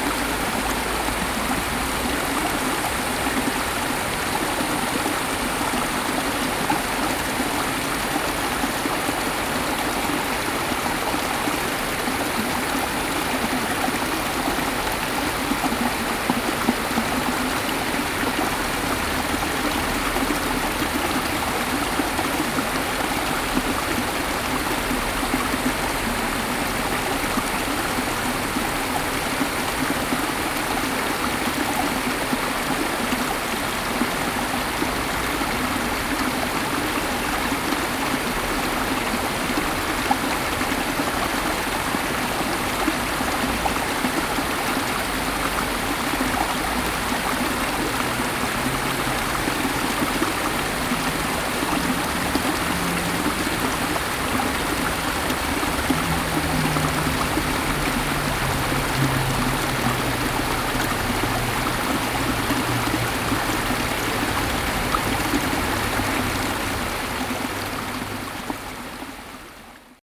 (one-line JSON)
{"title": "醒心橋, Tianfu, Sanxia Dist. - Stream", "date": "2012-07-08 08:34:00", "description": "The sound of water, Stream, Stone\nZoom H4n +Rode NT4", "latitude": "24.88", "longitude": "121.38", "altitude": "104", "timezone": "Asia/Taipei"}